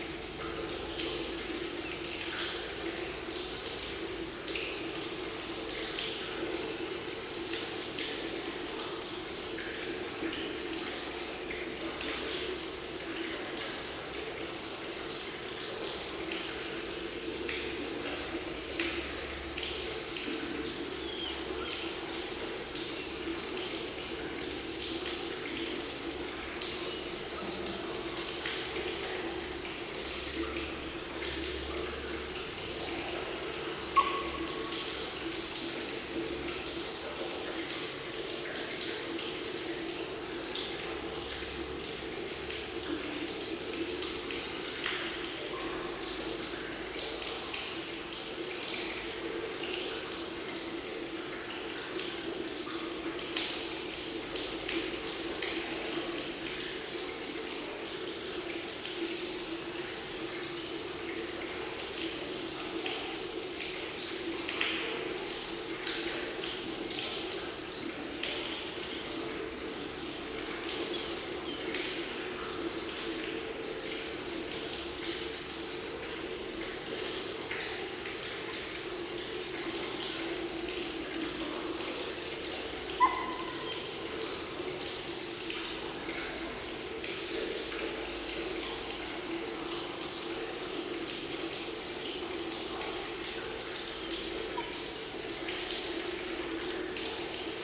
Vodopády v zahradě Kinských, Praha, Czechia - Vodárna Kinských
Zvuk oknem vodárenské stavby, která je součástí petřínských pramenů.